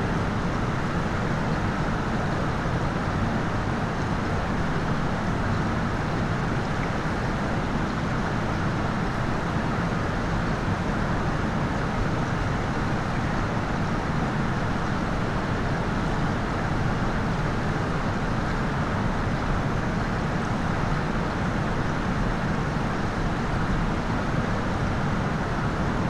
{
  "title": "Pempelfort, Düsseldorf, Deutschland - Düsseldorf, Münstertherme, swim hall",
  "date": "2013-01-14 08:30:00",
  "description": "Inside an old, classical designed public swim hall. The sound of the empty hall ventilation and heating system and the silent gurgle and splishes of the water in the pool.\nIn the distance accents and voices of workers who clean the place.\nThis recording is part of the intermedia sound art exhibition project - sonic states\nsoundmap nrw -topographic field recordings, social ambiences and art places",
  "latitude": "51.24",
  "longitude": "6.78",
  "altitude": "43",
  "timezone": "Europe/Berlin"
}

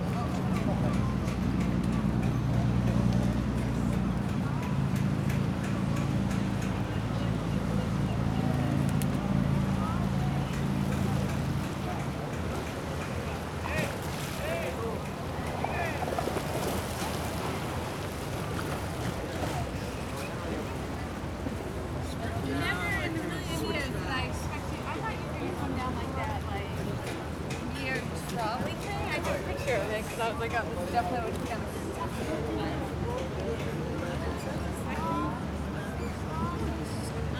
{
  "title": "Porto, at the bank of Douro river - into a passageway",
  "date": "2013-10-01 16:22:00",
  "description": "walking on the promenade. sounds of tourists enjoying the day, having food at the restaurants. walking into a passage under the buildings, passing near a window of a kitchen and a huge vent.",
  "latitude": "41.14",
  "longitude": "-8.61",
  "altitude": "25",
  "timezone": "Europe/Lisbon"
}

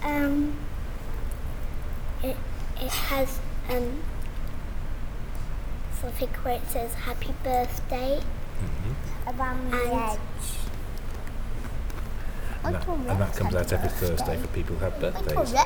{
  "title": "Main hall described by 1/2H",
  "date": "2011-03-08 11:01:00",
  "latitude": "50.39",
  "longitude": "-4.10",
  "altitude": "72",
  "timezone": "Europe/London"
}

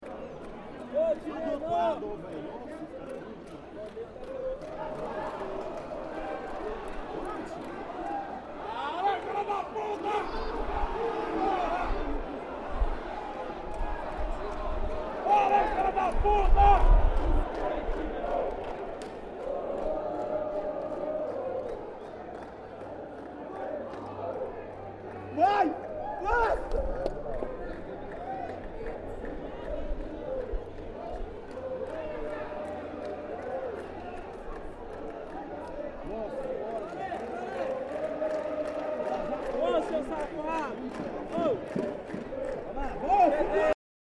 {
  "title": "Serra Dourada, Jogo do Vila Nova F.C.",
  "date": "2009-08-10 23:05:00",
  "description": "Jogo do VIla Nova Serie B",
  "latitude": "-16.70",
  "longitude": "-49.23",
  "altitude": "822",
  "timezone": "America/Sao_Paulo"
}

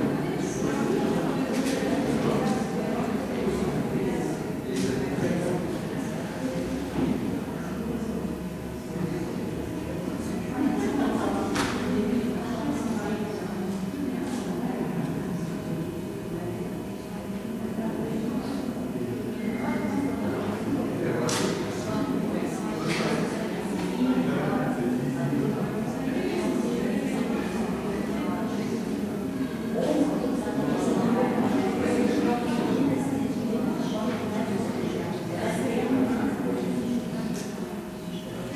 {
  "title": "Place des Célestins, Lyon, France - Théâtre des Célestins - Theater hall",
  "date": "1999-10-19 20:20:00",
  "description": "People waiting, talking, before going to the theater.\nTech Note : Sony ECM-MS907 -> Minidisc recording.",
  "latitude": "45.76",
  "longitude": "4.83",
  "altitude": "182",
  "timezone": "Europe/Paris"
}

